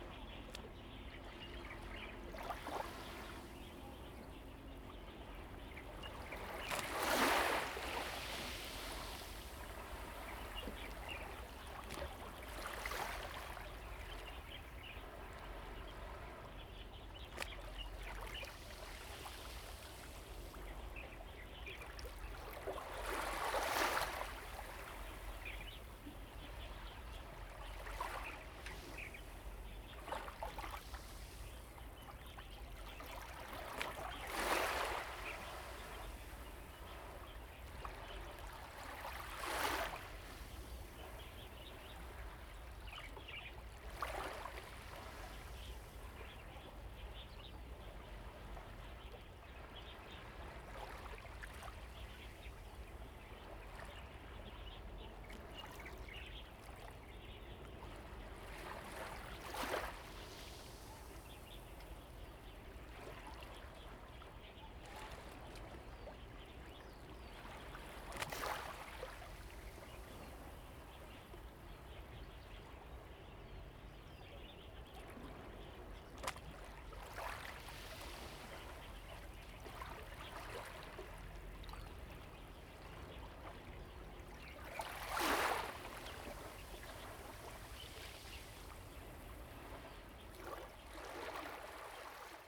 杉福漁港, Liuqiu Township - Waves and tides
Birds singing, Chicken sounds, Small fishing port, Small beach, Waves and tides
Zoom H2n MS+XY
2 November, 07:40